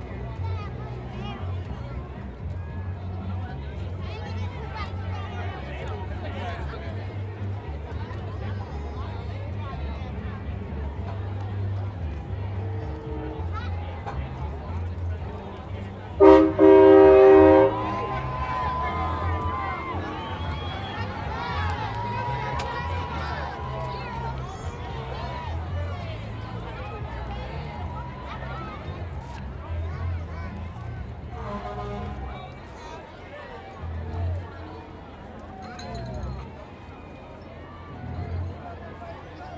South Los Angeles, Los Angeles, Kalifornien, USA - martin luther king memorial parade
los angeles - martin luther king memorial parade at crenshaw / martin luther king jr, music and sounds from passing floats, yelling spectators, aound 12:30pm